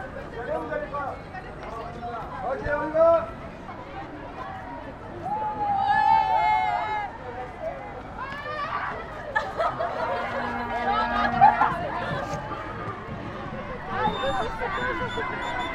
Mons, Belgium - K8strax race - Arriving in the Mons station
In aim to animate the K8strax, a big scout race, we ordered a complete train, from Ottignies to Mons. 1250 of our scouts arrive in the Mons station. We are doing noise and a lot of passengers are desperate ! During this morning, there's very-very much wind, I had to protect the microphones with strong pop filter.
21 October 2017